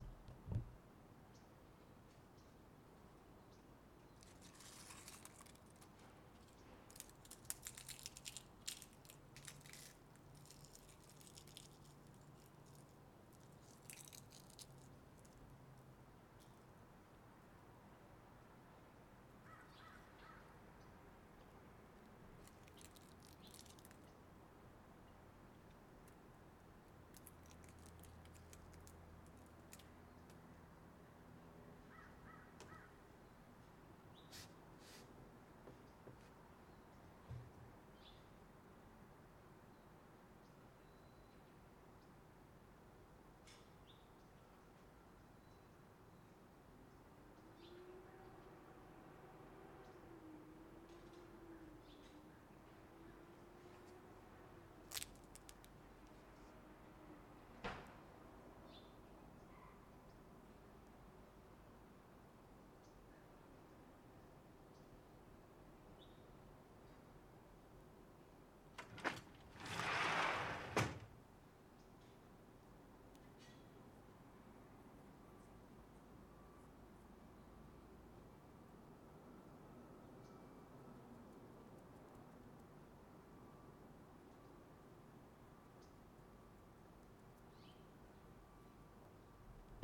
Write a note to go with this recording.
Outside on balcony, apartment complex, handheld size zoom recorder on portable tripod, clean sock over stereo microphones